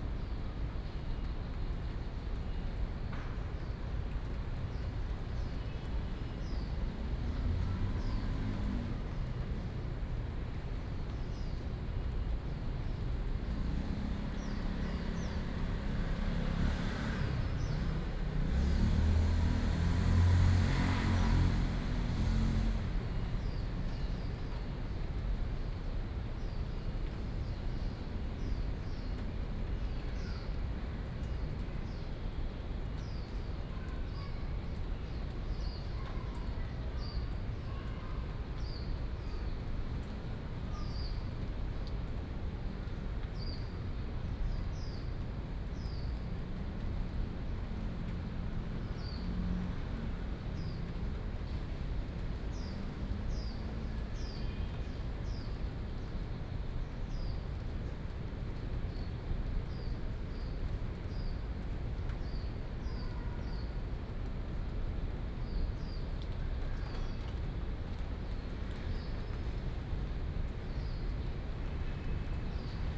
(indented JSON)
{
  "title": "和安公園, Da’an Dist., Taipei City - in the Park",
  "date": "2015-07-30 16:21:00",
  "description": "in the Park, Raindrop, After the thunderstorm",
  "latitude": "25.03",
  "longitude": "121.54",
  "altitude": "17",
  "timezone": "Asia/Taipei"
}